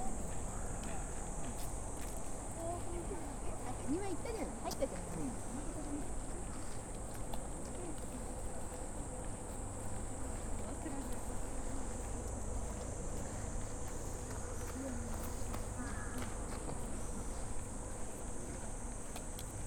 Yoyogikamizonochō, Shibuya-ku, Tōkyō-to, Япония - Meiji Shrine Gyoen
The road to the temple
Tōkyō-to, Japan, 28 July 2016